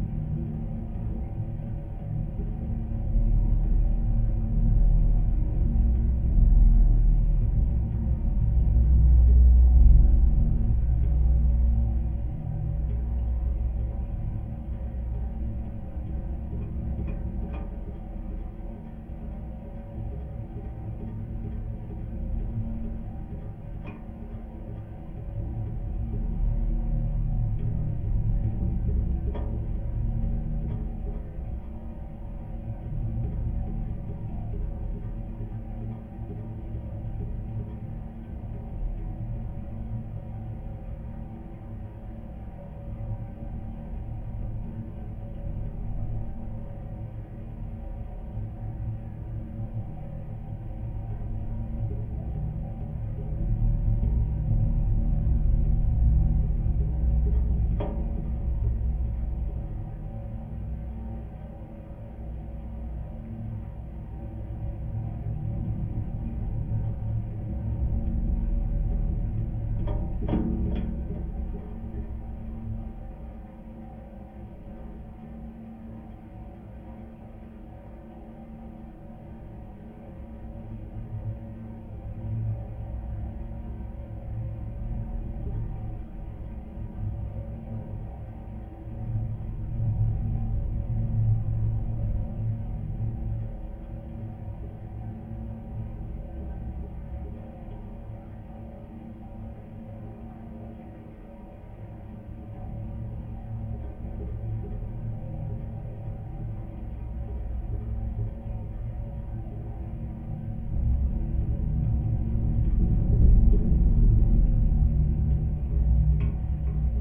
Joneliškės, Lithuania, swaying sign
LOM geophone on a metallic sign swaying in a wind. low frequencies